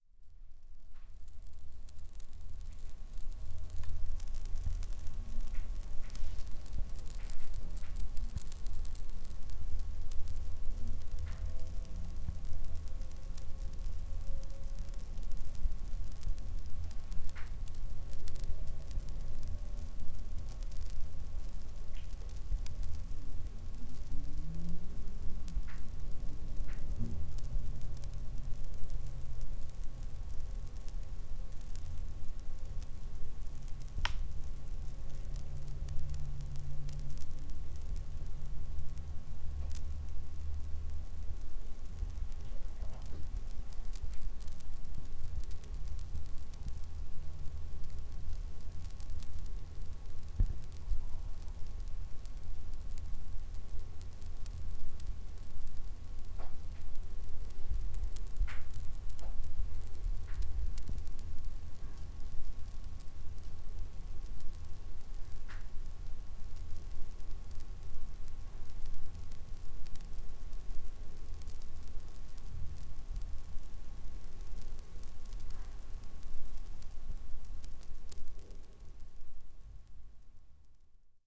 poznan, windy hill district, bathroom - soap bubbles crackle
sound of popping soap bubbles in the bathtub. also creaking of my slippers. and although the recording was made inside, sounds of cars and motorbikes can be heard through the air shafts...